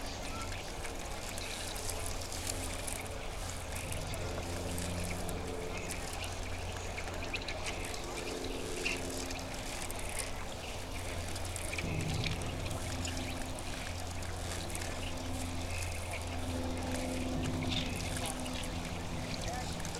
Ontario Place, Lake Shore Blvd W, Toronto, ON, Canada - Whispering ice
Big patches of ice floating on light waves, recorded on the pier of Ontario Place marina. Tascam DR05, EM172 mics